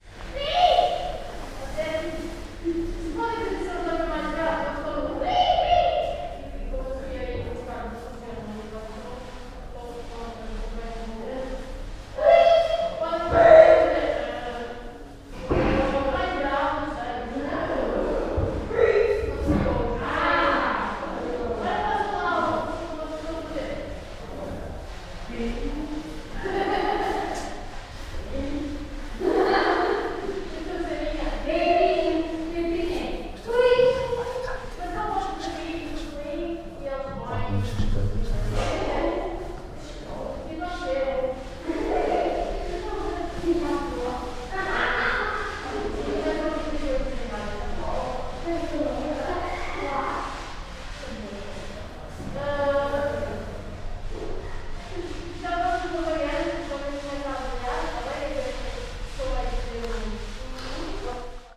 Recording of the interpretation class at Centro de Inclusão Social using a Sony M10.
Porto, Portugal